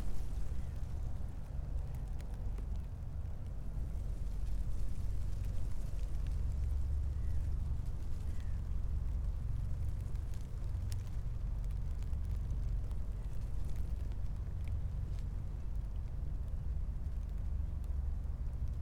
Rue de l'Arnière, Orgerus, France - Wheat field still green, growing about 20 centimeters in April
The wind came from the west-south west.
The fields are still green and fresh.